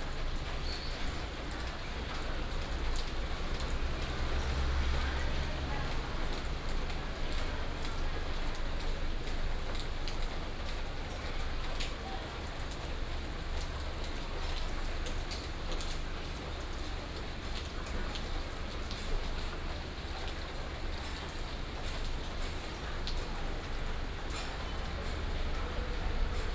Xin 3rd Rd., Zhongzheng Dist., Keelung City - Rainy day
Old Quarter, Rainy day, Traffic sound, Binaural recordings, Sony PCM D100+ Soundman OKM II
Zhongzheng District, Keelung City, Taiwan